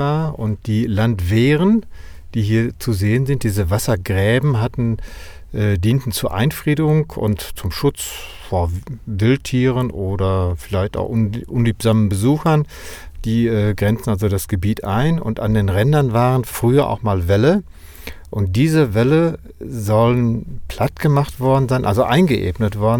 Weetfeld, Hamm, Germany - Grenzwanderung...
Rudi Franke Herold and Stefan Reuss take us on a guided tour through Weetfeld after our interview recording in the Old School. It’s an icy wind outside, and we decide to go by car together. Marcos da Costa Melo of FUgE (Forum for the Environment and Equitable Development) who had listened quietly to our recording joins us. Our tour traces the borders of former building plans and current developments.
The Lanfermannweg was the Northern border of the former development plans leading right up to the Old School of the village, which we just left… once the sounds of a local forge would be heard around here…
Nach unserem Gespräch in der Alten Schule, nehmen uns Rudi Franke Herold und Stefan Reuss mit auf eine Führung vor Ort. Es ist ein eisiger Wind draussen, und wir entschliessen uns, die Tour mit dem Auto zu machen. Marcos da Costa Melo (Geschäftsführer von FUgE), der unseren Tonaufnahmen schweigend lauschte, ist nun auch dabei.
28 November 2014, 17:28